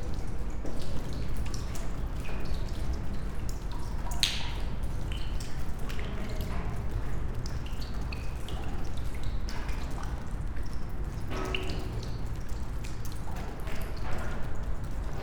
Punto Franco Nord, house, Trieste, Italy - raindrops in the box 54
raindrops poema with spoken words as first flow ... on one of the floors of abandoned house number 25 in old harbor of Trieste, silent winds